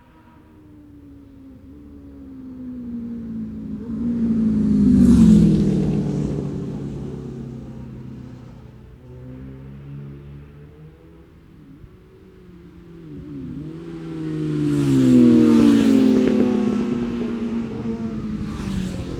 Scarborough UK - Scarborough Road Races 2017 ... classic superbikes ...
Cock o' the North Road Races ... Oliver's Mount ... Classic Racing Machines practice ...
24 June, 09:45